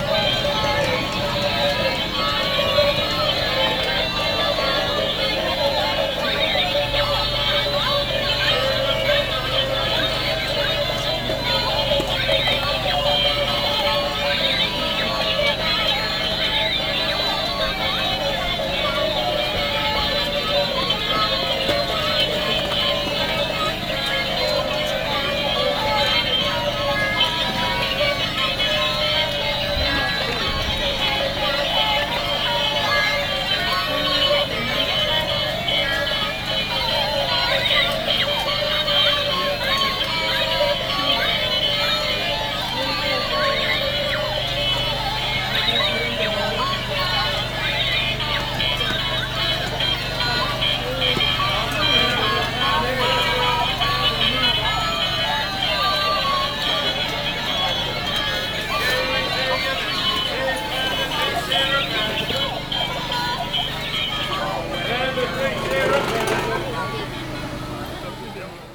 London, sunday morning, market on Middlesex Street, market stall selling toys with sound
City of London, UK, 3 October 2010, 11:00